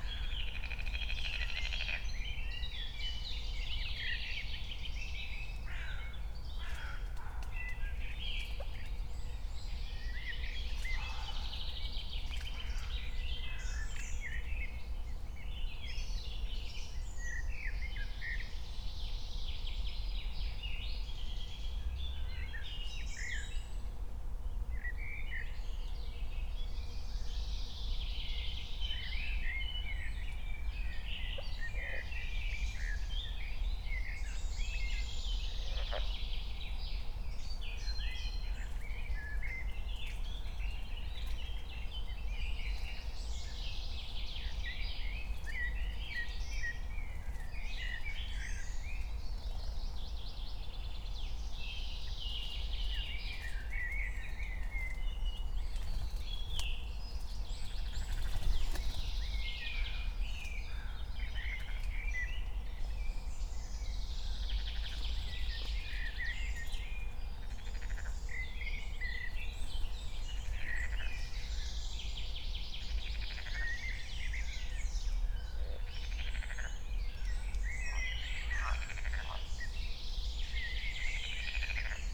{
  "title": "Königsheide, Berlin - forest ambience at the pond",
  "date": "2020-05-23 09:00:00",
  "description": "9:00 dog, frog, crows and other birds",
  "latitude": "52.45",
  "longitude": "13.49",
  "altitude": "38",
  "timezone": "Europe/Berlin"
}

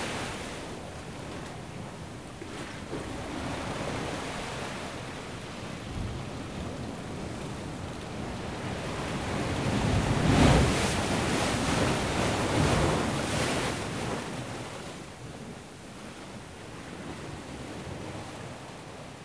{
  "title": "Vaux-sur-Mer, Royan, France - waves impact [Royan]",
  "date": "2015-10-15 15:44:00",
  "description": "Vagues deferlentes contres les rochers .\nWaves impact against rocks .",
  "latitude": "45.63",
  "longitude": "-1.06",
  "altitude": "5",
  "timezone": "Europe/Paris"
}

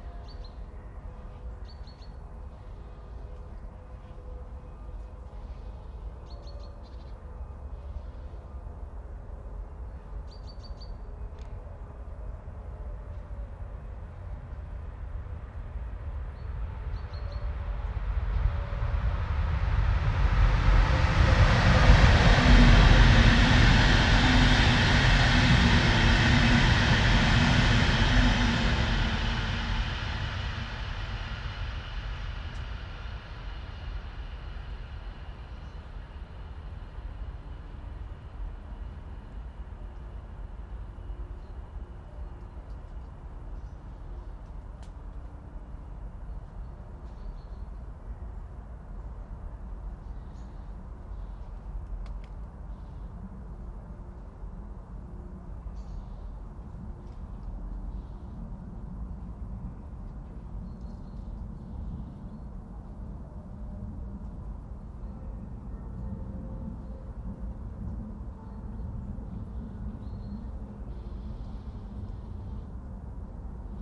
{
  "title": "Rain, trains, clangy bells, autumn robin, ravens, stream from the Schöneberger Südgelände nature reserve, Berlin, Germany - Distant Sunday bells, a train briefly sings, a plane and human voices",
  "date": "2021-11-28 09:25:00",
  "description": "Distant Sunday bells add to the background. But it is now a little busier on this beautiful morning. Trains still pass, a plane roars above and the first voices of human voices of the day are heard.",
  "latitude": "52.46",
  "longitude": "13.36",
  "altitude": "45",
  "timezone": "Europe/Berlin"
}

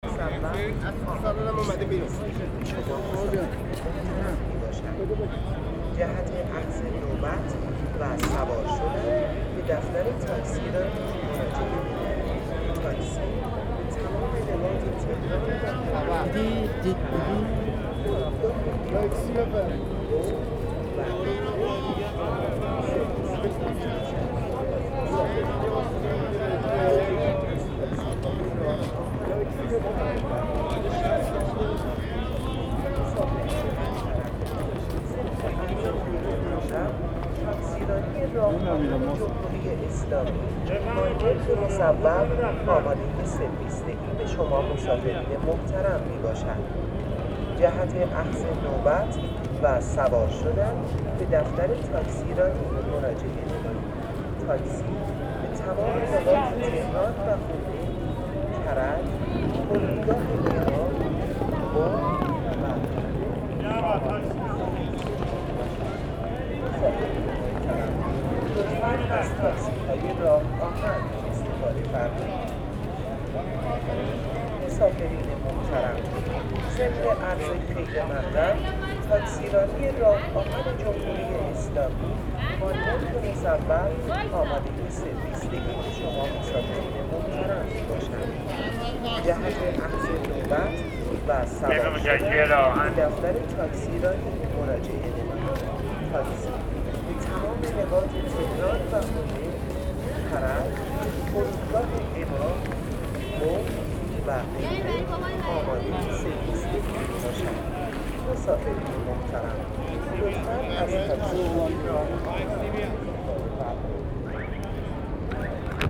{
  "title": "Tehran Railway Station - Rah Ahan Square",
  "date": "2019-10-25 06:06:00",
  "description": "Station forecourt in the early hours of the morning.",
  "latitude": "35.66",
  "longitude": "51.40",
  "altitude": "1117",
  "timezone": "Asia/Tehran"
}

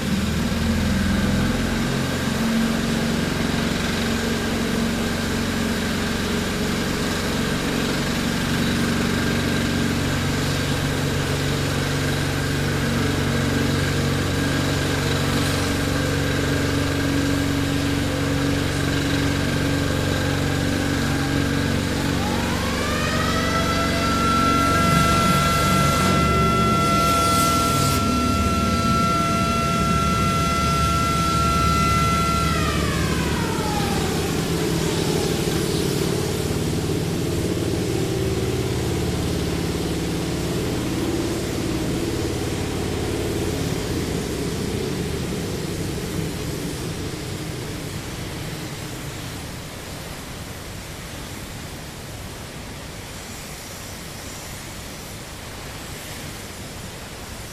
{
  "title": "taphole-drilling - Arcelor-Mittal taphole-drilling HFB",
  "date": "2009-04-29 22:37:00",
  "latitude": "50.61",
  "longitude": "5.55",
  "altitude": "85",
  "timezone": "GMT+1"
}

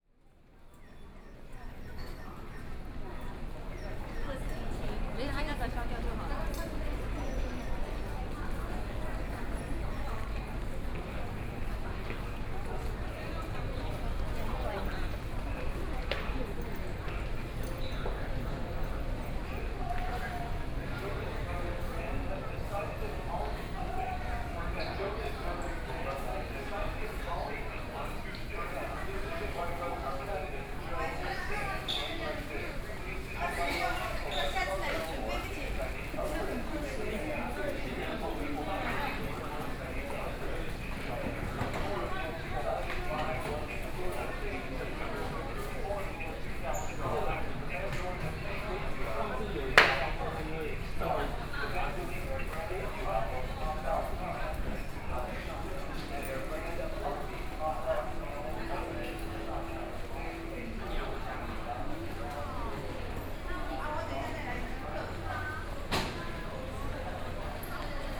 {
  "title": "Zhongshan Dist., Taipei City - In the underground mall",
  "date": "2013-10-31 18:25:00",
  "description": "Underground shopping street, From the station to department stores, Binaural recordings, Sony PCM D50 + Soundman OKM II ( SoundMap20131031- 10)",
  "latitude": "25.05",
  "longitude": "121.52",
  "altitude": "11",
  "timezone": "Asia/Taipei"
}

